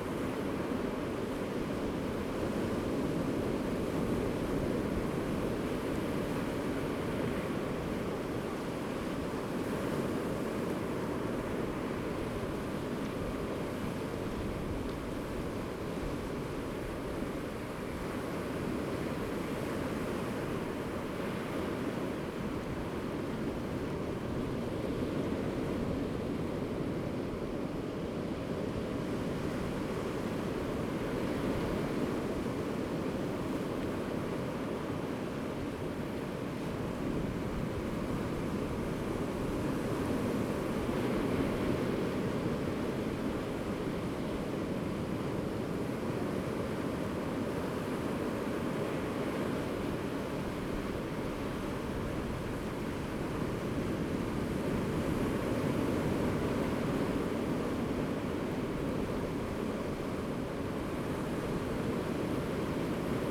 興昌村, Donghe Township - At the seaside
At the seaside, Sound of the waves, Very hot weather
Zoom H2n MS+ XY